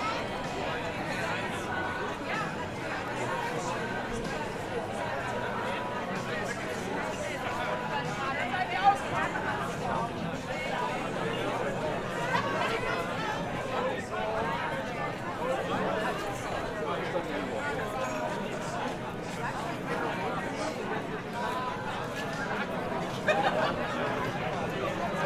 Itzehoe, Deutschland - Christmas Market 2016 Itzehoe, Germany
Christmas Market 2016 Itzehoe, Germany, Zoom H6 recorder, xy capsule